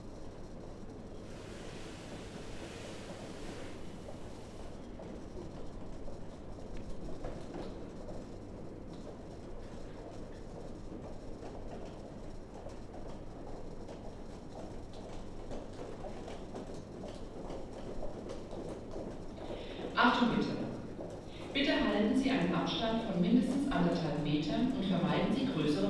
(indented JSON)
{
  "title": "Passage Airport to Trainstation, Frankfurt am Main, Deutschland - Corona Anouncement",
  "date": "2020-04-24 18:01:00",
  "description": "Another recording of this aisle, now some people are passing, some policeman passes by talking (what is he doing there, meaning me with the recording device), again the anouncement is made that people should not be in masses - which would have been a good reminder at the main station at this day but not here, in this very empty hall...",
  "latitude": "50.05",
  "longitude": "8.57",
  "altitude": "116",
  "timezone": "Europe/Berlin"
}